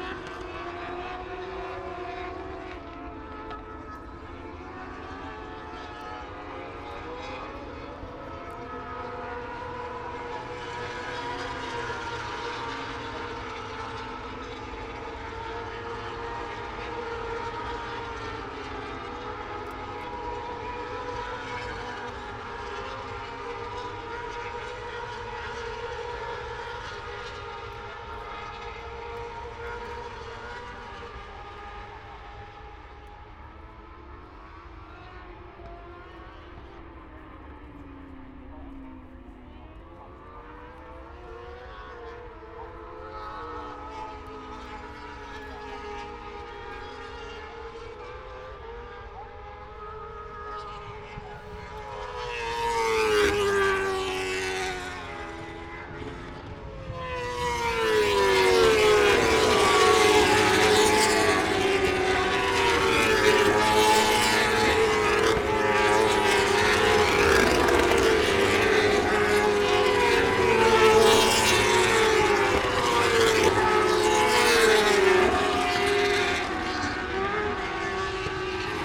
Silverstone Circuit, Towcester, UK - British Motorcycle Grand Prix 2017 ... moto two ...
moto two ... qualifying ... open lavaliers clipped to chair seat ...